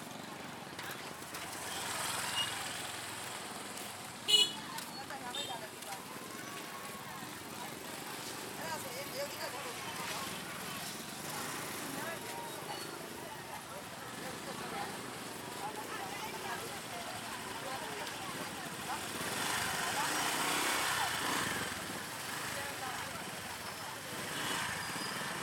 St, St, Chanayetharsan Tsp, Mandalay, Myanmar (Birma) - market. mandalay.
market. mandalay. 27th street.
မန္တလေးခရိုင်, မန္တလေးတိုင်း, မြန်မာ, 23 February 2020